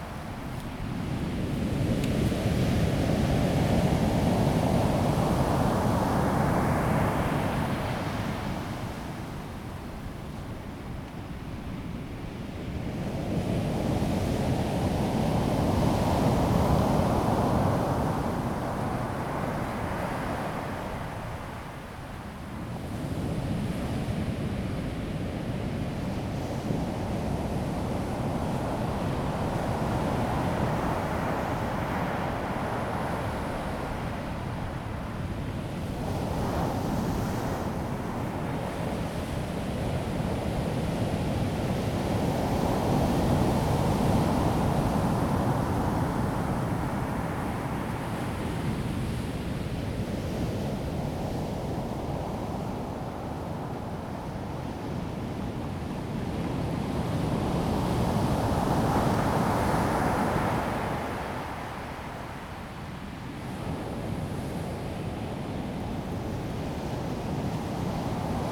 大鳥, 大武鄉南迴公路 Dawu Township - on the beach
on the beach, Sound of the waves, Wind
Zoom H2N MS+ XY
Dawu Township, Taitung County, Taiwan, 23 March 2018